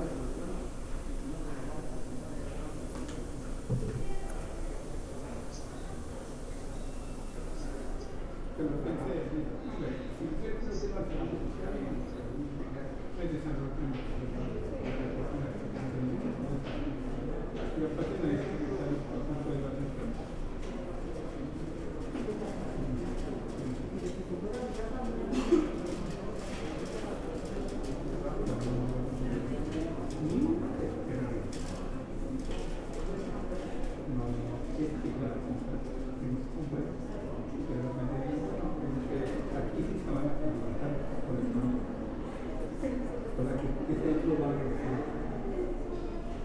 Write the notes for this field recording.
Sitting on a bench at the Aranda De La Parra hospital and then walking through various areas of the ground floor / first floor. I made this recording on march 19th, 2022, at 1:04 p.m. I used a Tascam DR-05X with its built-in microphones. Original Recording: Type: Stereo, Esta grabación la hice el 19 de marzo de 2022 a las 13:04 horas. Usé un Tascam DR-05X con sus micrófonos incorporados.